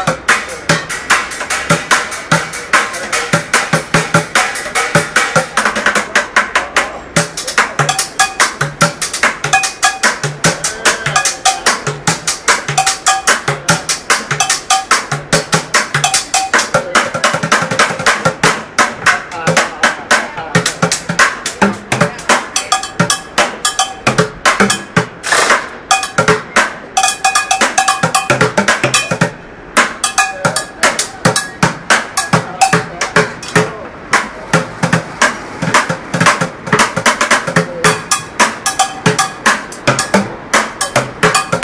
6th Ave and 35th Street
Pots and Pans Drummer on the corner of 6th and 35th. Bought him new sticks to play with, so talented.